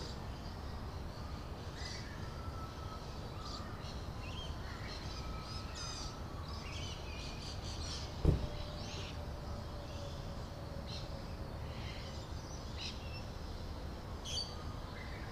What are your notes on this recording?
Early winter morning, Birds awake before the city .( Neil Mad )